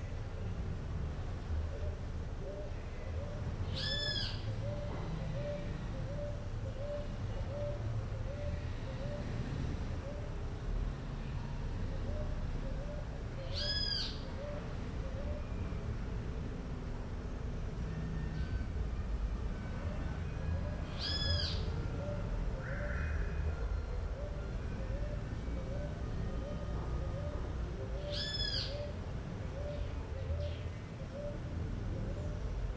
Fundación El Boga. Mompós, Bolívar, Colombia - El patio de El Boga
En la tarde, desde una hamaca en el patio colonial, se escuchan los pájaros y los sonidos distantes de carros y música.